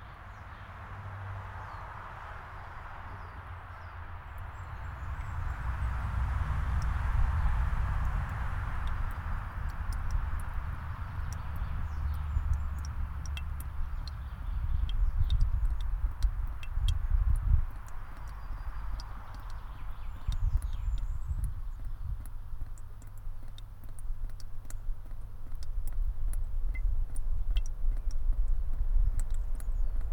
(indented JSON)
{
  "title": "Grahvi, Paasiku, Harju maakond, Estonia - Birch Sap",
  "date": "2020-04-04 20:16:00",
  "description": "Birch sap drips into the bottle and the birds sing.",
  "latitude": "59.36",
  "longitude": "25.32",
  "altitude": "55",
  "timezone": "Europe/Tallinn"
}